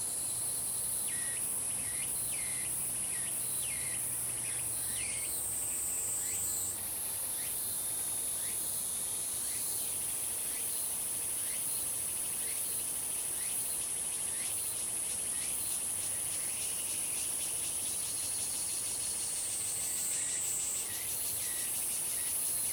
Woody House, 南投縣埔里鎮桃米里 - Birds singing
Insect sounds, Birds singing
Zoom H2n MS+XY